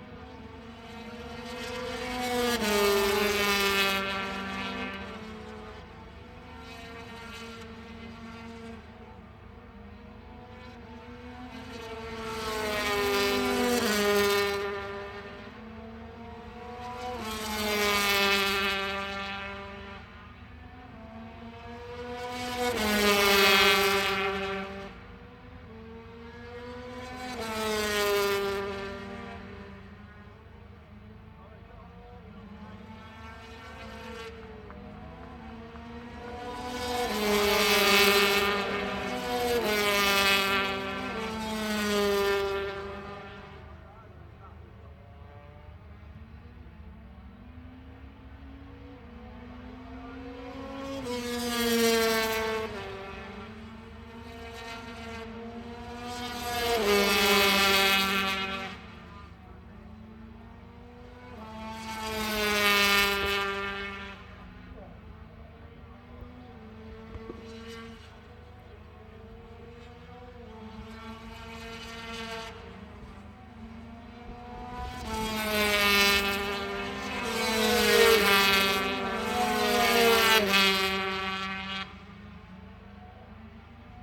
Derby, UK - british motorcycle grand prix 2006 ... free practice 125
british motorcycle grand prix 2006 ... free practice 125 ... one point stereo mic to minidisk